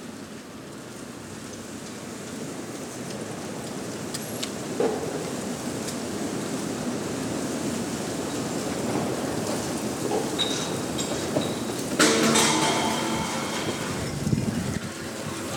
Brabanter Str., Köln, Deutschland - Storm Eunice
Storm Eunice recorded with the small Roland Wearpro Mics which have spent the last 18 months outside in front of my window. No doubt the greatest fun to be had for under 10 euros.